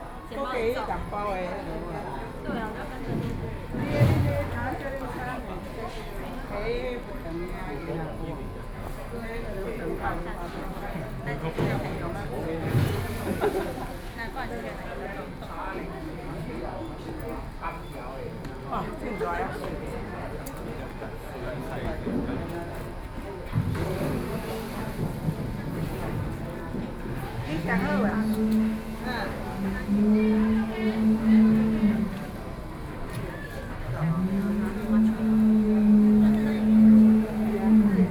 瑞芳火車站, New Taipei City - On the platform
New Taipei City, Taiwan, 13 November 2012, 13:10